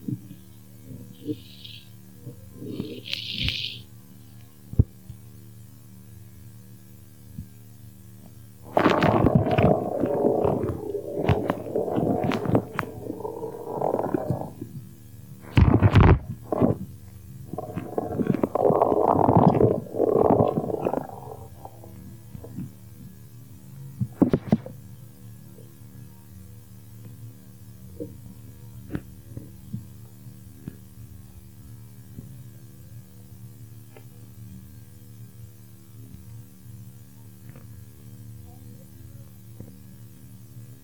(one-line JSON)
{"title": "R. Maj. Sertório - Vila Buarque, São Paulo - SP, Brasil - Piezo em baixo da terra com água (3 minutos)", "date": "2018-06-21 11:00:00", "description": "Água sendo jogada sobre a terra, com piezo enterrado.", "latitude": "-23.55", "longitude": "-46.65", "altitude": "772", "timezone": "America/Sao_Paulo"}